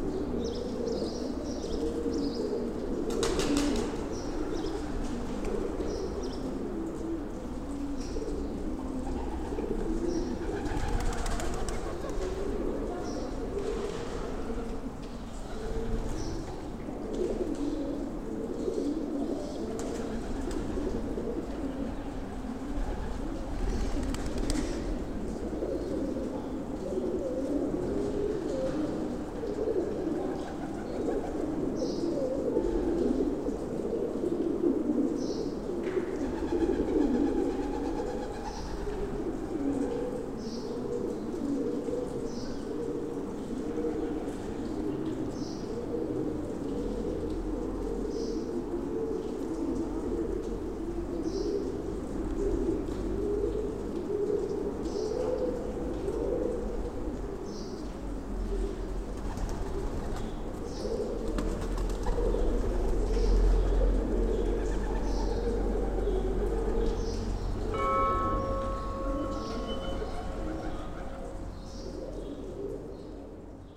there's a chapel in the cave...ambience with pigeons
Kissamos, Greece, May 2019